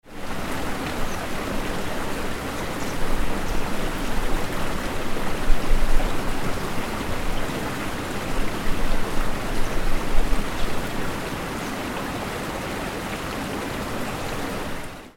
Bruksån, Sollefteå, City creek
To listen to water in different forms really makes sense on a rainy day as it is present everywhere - also soundwise. So next stop in the soundwalk on the World Listening Day was at the middle sized creek called Bruksån in the city center of Sollefteå. Here we stopped at the bridge and listened a while. WLD